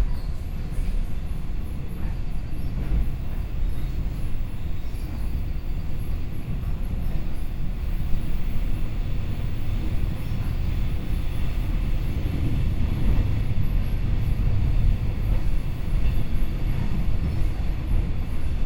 Toucheng Township, Yilan County - Local Train
from Guishan Station to Daxi Station, Binaural recordings, Zoom H4n+ Soundman OKM II
Yilan County, Taiwan, November 2013